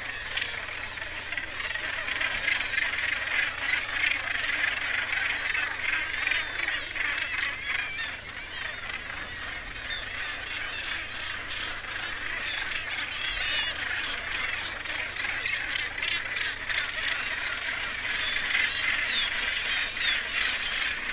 Bempton, UK - Gannet colony soundscape ...
Gannet colony soundscape ... RSPB Bempton Cliffs ... gannet calls and flight calls ... kittiwake calls ... lavalier mics in parabolic reflector ... warm ... sunny morning ...
Bridlington, UK, 22 July 2016